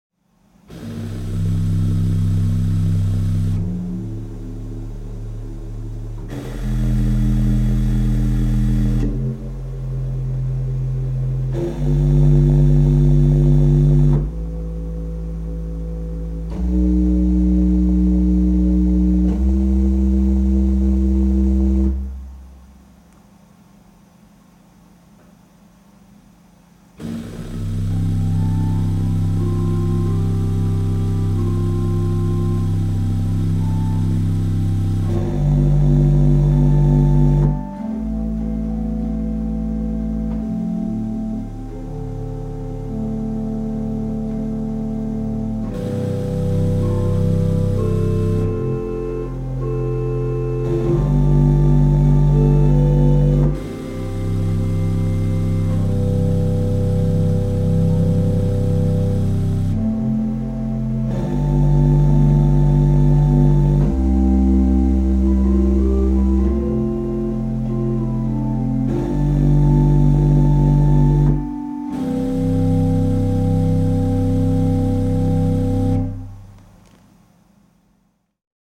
St Lawrence's Church, Crosby Ravensworth, Penrith, UK - St Lawrence's Church Organ Improvisation
St Lawrence's newly renovated Church Organ. Microphone very close to the bass pipes. Improvisation by David Jones. The organ was built by Wilkinson’s of Kendal in the 19th century and is one of a very few remaining of its type.
pearl MS-8, Sound Devices MixPre10T